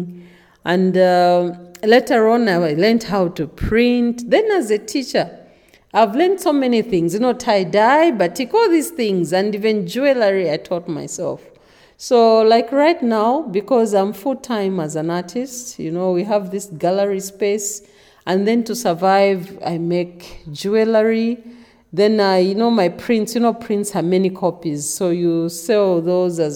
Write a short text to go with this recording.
… a bit later inside Wayi Wayi Gallery, Agness describes “Mbusa Ceremony”, an arranged re-staging of Agness’ and Laurence Bemba wedding (ubwinga), at once performance and research. The event initiated the artist-couple as well as many invited guests into the secret teachings of Mbusa, it married traditional women’s craft to the realm of contemporary arts and opened new channels of communication between indigenous culture, Art, ritual, performance, teaching, and life. She then goes on to talk about how indigenous culture inspires her as a contemporary artist, and refers to a recording from Binga, I had played to her earlier which left a picture in her head… (it’s the recording with Luyando and Janet at BaTonga Museum about women’s initation among the Tonga people; you can find it here on the map...)